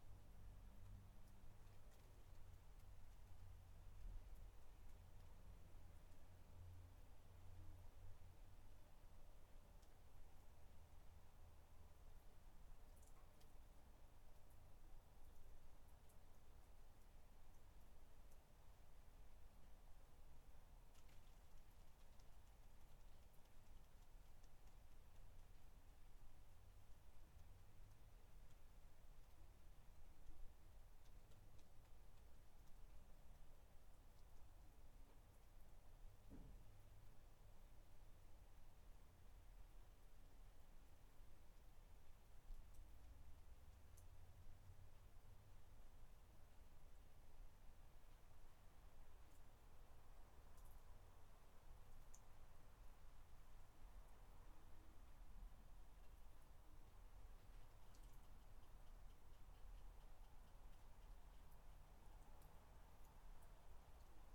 3 minute recording of my back garden recorded on a Yamaha Pocketrak
Solihull, UK, 13 August 2013, 12:00